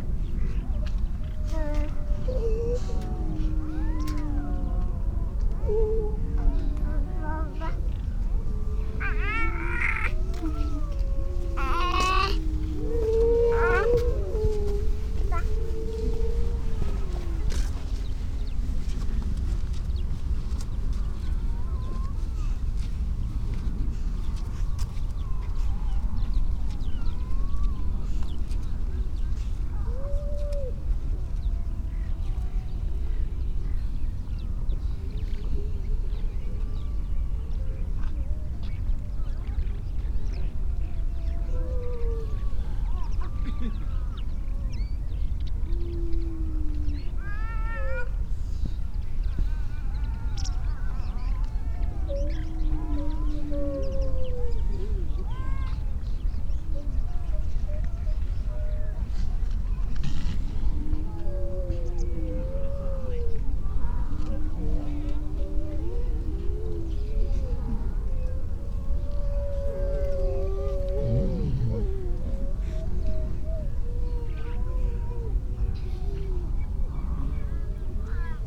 Unnamed Road, Louth, UK - grey seals ... donna nook ...
grey seals ... donna nook ... generally females and pups ... SASS ... birds calls ... skylark ... starling ... pied wagtail ... meadow pipit ... redshank ... dunnock ... curlew ... robin ... crow ... all sorts of background noise ...